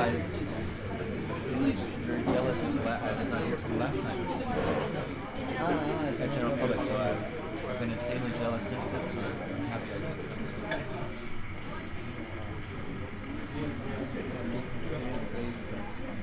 Privatclub, Markthale, Puecklerstr, before concert
Berlin, Germany, 9 October 2010